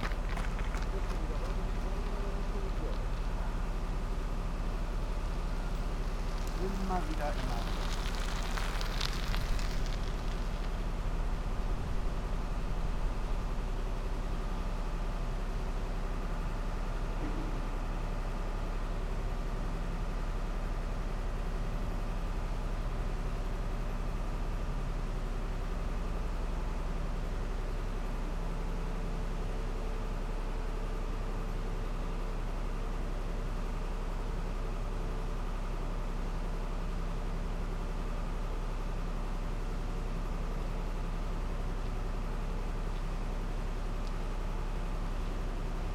Berlin, Stralau - transport ship engine
transport ship diesel engine ideling at the river bank. joggers and bikers.
Berlin, Deutschland, 25 July 2010